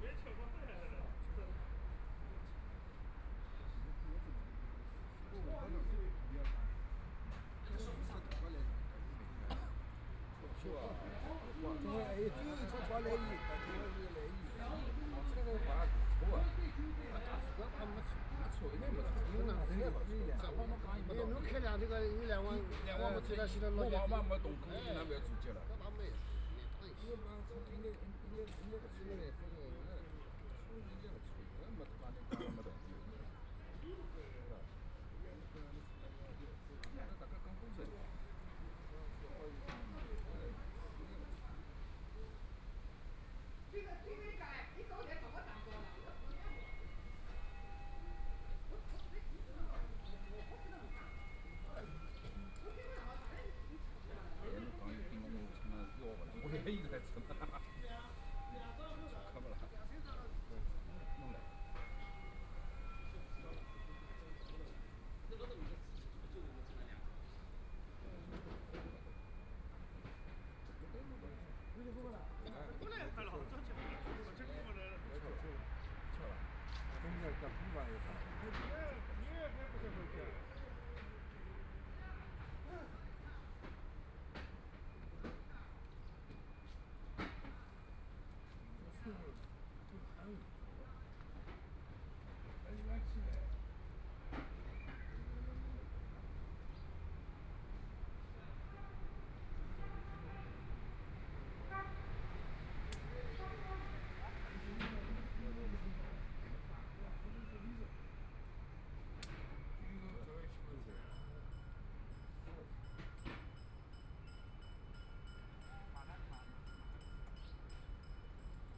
Penglai Park, Shanghai - in the park
Playing cards, Bells from schools, Binaural recording, Zoom H6+ Soundman OKM II
Huangpu, Shanghai, China, 27 November 2013